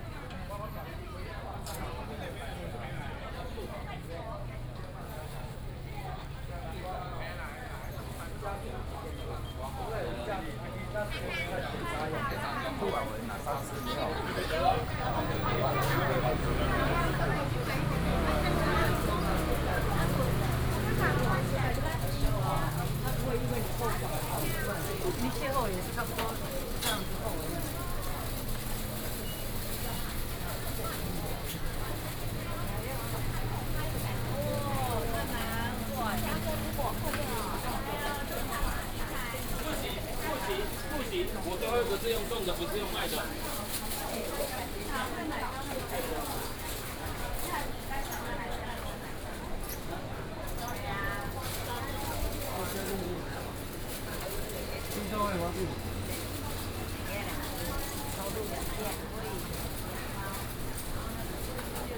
Walking through the traditional market, Small alley
21 July 2015, 08:38